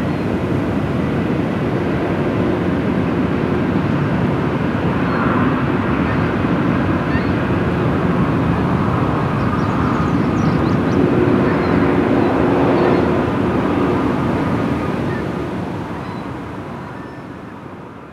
USACE EDP Romeoville, IL, USA - Asian Carp Electric Fence Dispersal Barrier
The last line of defense in an ecological nightmare scenario in the making. This site along the Illinois Canal, between an oil refinery and a coal pile, is the US Army Corps of Engineers latest, experimental attempt to keep the invasive Asian Carp from migrating past Chicago and into Lake Michigan. The water just north of this bridge is charged using sunken electrodes, thus discouraging the asian carp (and most other fish) from swimming further north, towards the city of Chicago and, eventually, invading the Great Lakes beyond. Natural echo enhanced by bridge acoustics.